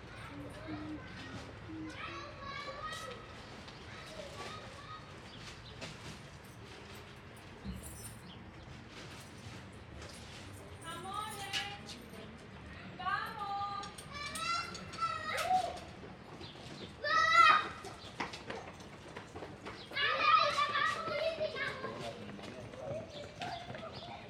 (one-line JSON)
{"title": "Wollankstraße, Berlin, Deutschland - Wollankstraße, Berlin - in front of a supermarket, shopping trolleys, customers", "date": "2012-10-13 12:26:00", "description": "Wollankstraße, Berlin - in front of a supermarket, shopping trolleys, customers. The supermarket is attracting many inhabitants of Soldiner Kiez. Sometimes they stop for a chat.\n[I used the Hi-MD-recorder Sony MZ-NH900 with external microphone Beyerdynamic MCE 82]\nWollankstraße, Berlin - Vor dem Supermarkt, Einkaufswägen, Kunden. Der Supermarkt zieht viele Einwohner aus der Umgegend an. Manchmal ist sogar Zeit für einen kurzen Schwatz.\n[Aufgenommen mit Hi-MD-recorder Sony MZ-NH900 und externem Mikrophon Beyerdynamic MCE 82]", "latitude": "52.56", "longitude": "13.39", "altitude": "48", "timezone": "Europe/Berlin"}